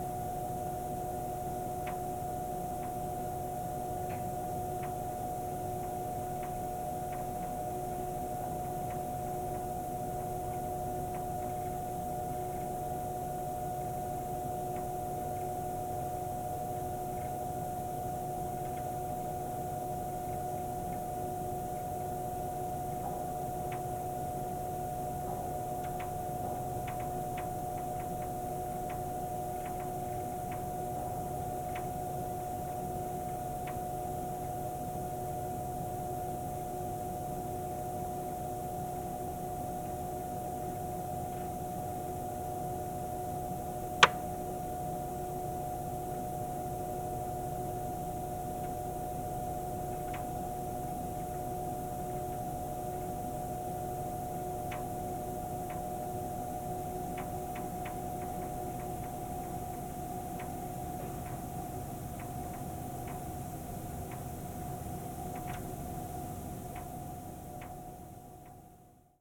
Grevenbroich, Germany - Rotation tones inside the wind generator
Recorded with a contact microphone this is the sound inside the metal tower of the wind generator. The wind is strong and the propeller at the top turns quite fast.
2 November 2012, ~16:00